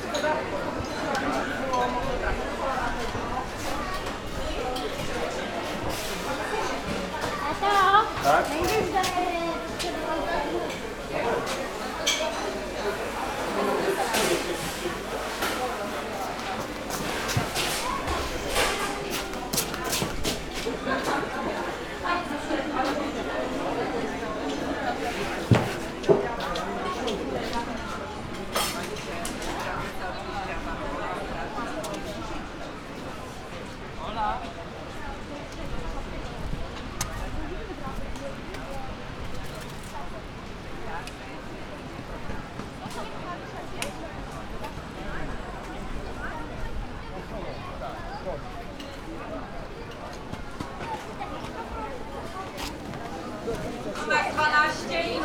Lubiatowo, restaurants near beach entrance - fish restaurants
visiting the decks of three fish restaurants in Lubiatowo. lots of people having their meals, ordering, pondering what to eat.